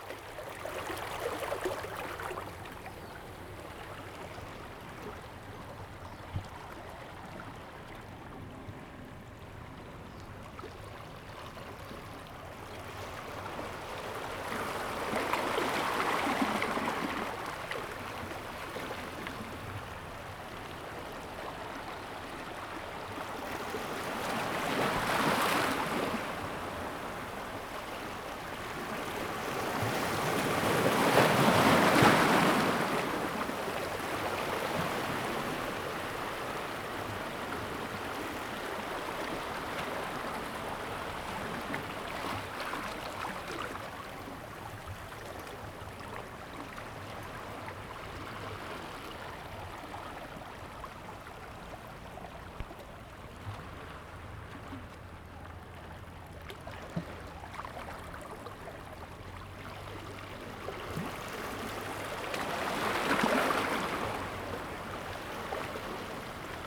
石雨傘漁港, Chenggong Township - sound of the waves
Small fishing port, Birdsong, Sound of the waves
Zoom H2n MS +XY
8 September, ~11:00